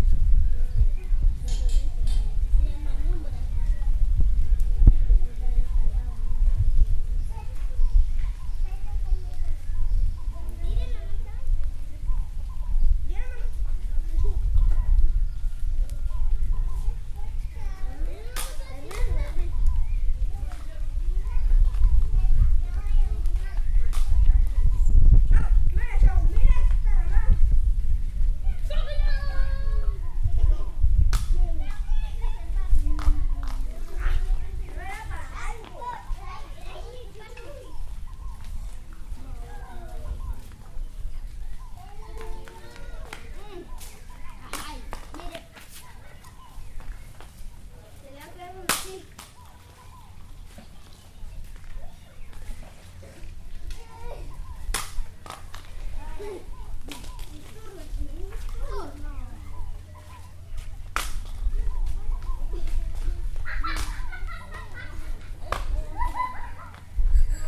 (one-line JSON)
{"title": "Puerto Gaitán, Meta, Colombia - Hogar Los Amigos ICBF-Resguardo Sikuani de Wacoyo", "date": "2014-07-29 10:05:00", "description": "Audio grabado en el hogar Los Amigos de Instituto Colombiano de Bienestar Familiar el miércoles 30 de julio de 2014 en el marco del Proyecto Piloto de Investigación, Sonoridad Sikuani, del Plan Departamental de Música del Meta.", "latitude": "4.33", "longitude": "-72.01", "altitude": "192", "timezone": "America/Bogota"}